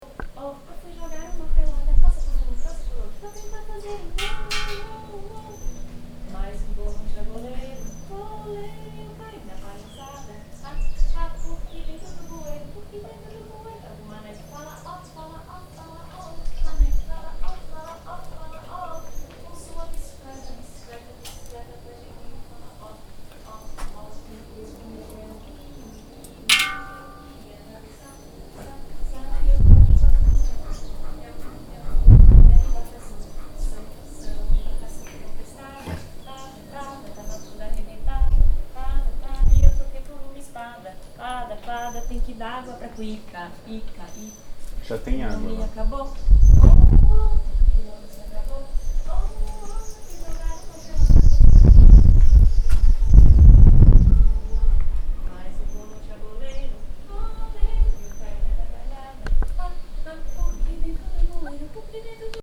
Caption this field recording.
Woman singing with her little baby